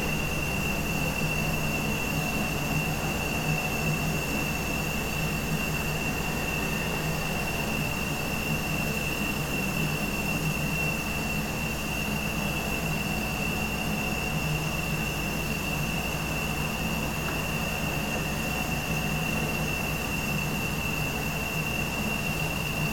Siūlų g., Kaunas, Lithuania - Gasbox hum amidst industrial block
General atmosphere, centered around a drone of a single gasbox, recorded with ZOOM H5 amidst industrial building block.